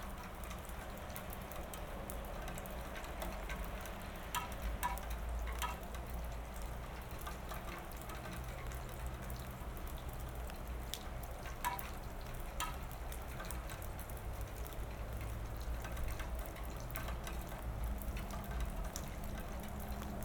Utena, Lithuania, rain ends...
waiting for the rain to stop. sennheiser ambeo headset recording
Utenos apskritis, Lietuva, 11 November 2021